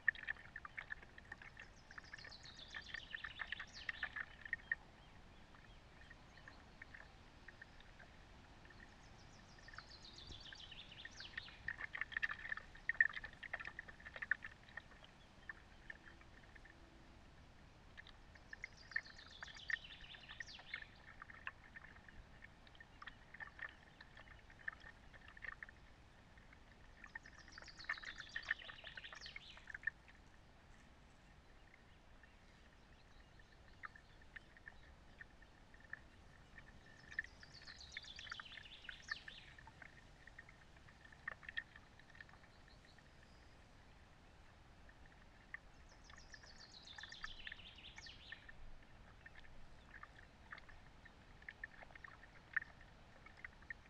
multitrack recording from the footbridge: soundscape mixed with hydrophone